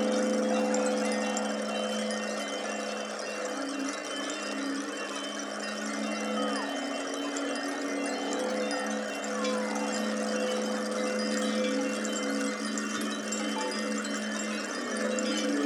Harmonic Fields, Zarbres Nantong
A stand of clay aeolian ocarinas. About 20 each with a reed tail to point it into the wind.
French artist and composer, Pierre Sauvageot (Lieux publics, France) created a a symphonic march for 500 aeolian instruments and moving audience on Birkrigg Common, near Ulverston, Cumbria from 3-5 June 2011. Produced by Lakes Alive
500 Aeolian instruments (after the Greek god, Aeolus, keeper of the wind) were installed for 3 days upon the common. The instruments were played and powered only by the wind, creating an enchanting musical soundscape which could be experienced as you rest or move amongst the instruments.
The installation used a mixture of conventional and purpose built instruments for example, metal and wood cellos, strings, flutes, Balinese scarecrows, sirens, gongs, harps and bamboo organs. They were organised into six sections, each named after different types of winds from around the world.